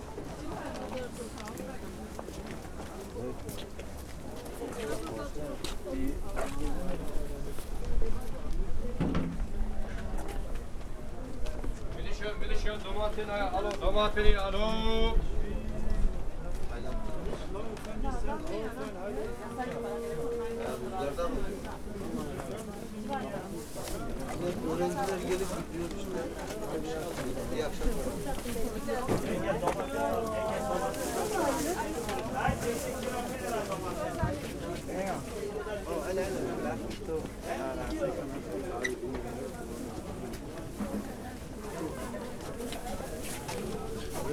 berlin, maybachufer: wochenmarkt - the city, the country & me: market day
windy spring day, a walk around the market
the city, the country & me: april 12, 2011
Berlin, Germany